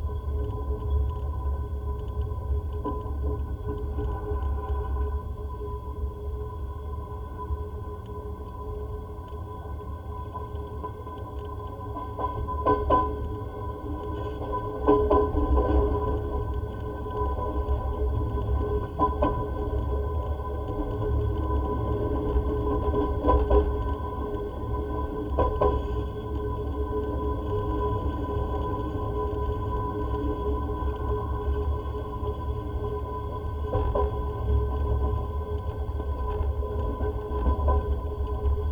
porta westfalica - train sounds
train sounds recorded with contact mic
Porta Westfalica, Germany, 28 June 2010